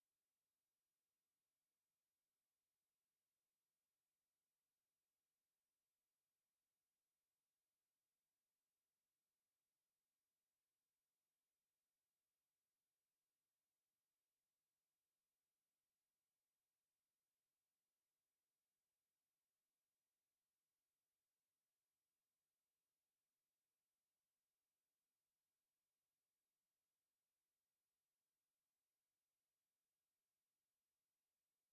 Düsseltal, Düsseldorf, Deutschland - Düsseldorf, ice stadium, empty hall
Inside the old Ice Stadium of Duesseldorf. The hall is empty and you hear the sound of the the street traffic from the nearby street and water streams as some workers clean the walk ways with a hose pipe.
This recording is part of the exhibition project - sonic states
soundmap nrw -topographic field recordings, social ambiences and art places
11 December, 07:39